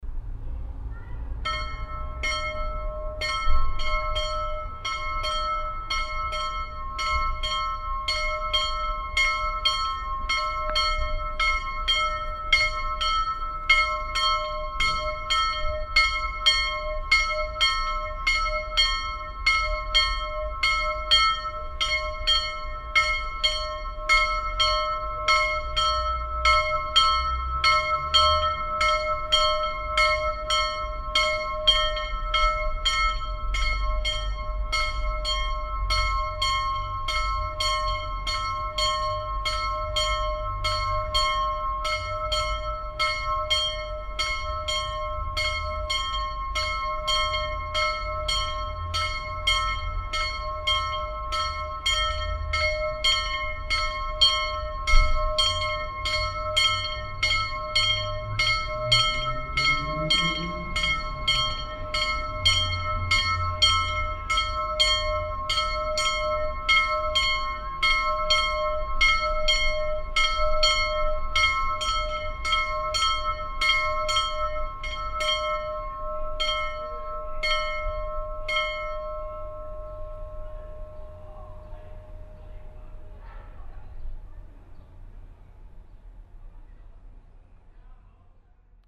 vianden, chapell, bells
At the Place de Resistance behind a small and old chapell. The bell of the chapell kindly played by Dechant Feltes on a warm summer afternoon. Car traffic and people talking in the distance.
Vianden, Kapelle, Glocken
Auf dem Place de la Resistance hinter einer kleinen alten Kapelle. Die Glocke der Kapelle freundlicherweise geläutet von Dechant Feltes an einem warmen Sommernachmittag. Autoverkehr und Menschen, die in der Ferne sprechen.
Vianden, chapelle, cloches
Sur la Place de la Résistance, derrière une vieille et petite chapelle. La cloche de la chapelle, aimablement actionnée par Dechant Feltes, un chaud après-midi d’été. Le trafic automobile et des personnes qui parlent au loin.
Project - Klangraum Our - topographic field recordings, sound objects and social ambiences
Vianden, Luxembourg, 9 August 2011